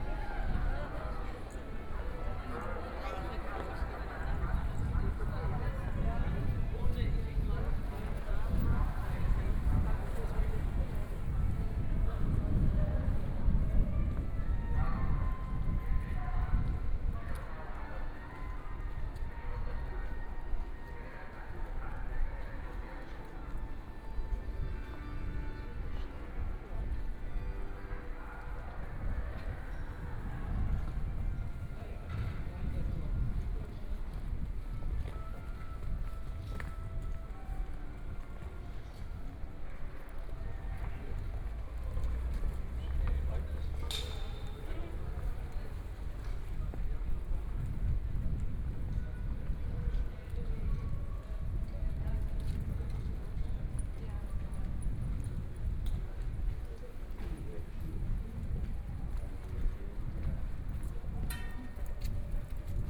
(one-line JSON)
{"title": "Odeonsplatz, Munich 德國 - soundwalk", "date": "2014-05-11 11:39:00", "description": "walking in the Street, Street music, Pedestrians and tourists", "latitude": "48.14", "longitude": "11.58", "altitude": "525", "timezone": "Europe/Berlin"}